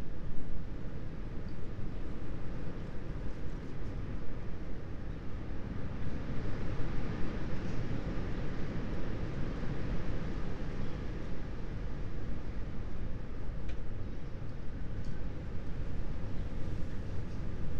09:55 Berlin Bürknerstr., backyard window - Hinterhof / backyard ambience